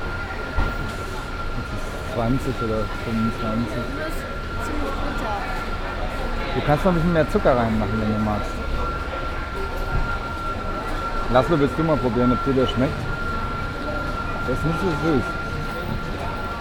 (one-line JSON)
{"title": "Rong Mueang Rd, Khwaeng Rong Muang, Khet Pathum Wan, Krung Thep Maha Nakhon, Thailand - Taxistand am Huang Lampong-Bahnhof in Bangkok", "date": "2017-08-05 06:45:00", "description": "The atmosphere of traffic, people and the whistling of the taxi warden in the nicely reverberating front hall of the Huang Lampong train station in Bangkok, while waiting for the early morning train to Surathani to leave, my and my 2 sons with coffee, hot chocolate and pastry.", "latitude": "13.74", "longitude": "100.52", "altitude": "8", "timezone": "Asia/Bangkok"}